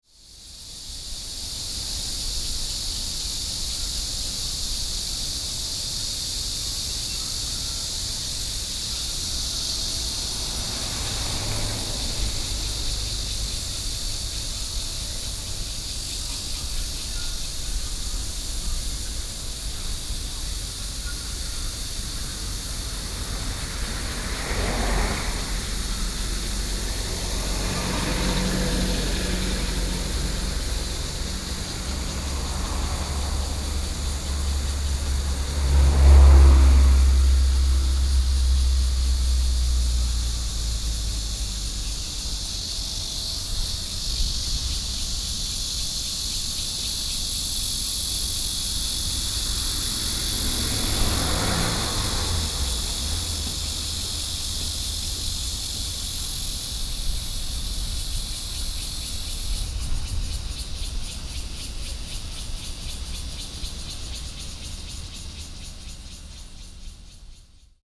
挖仔尾自然生態公園, Bali District - In the entrance to the park
In the entrance to the park, Cicadas cry, Traffic Sound, hot weather
Sony PCM D50+ Soundman OKM II..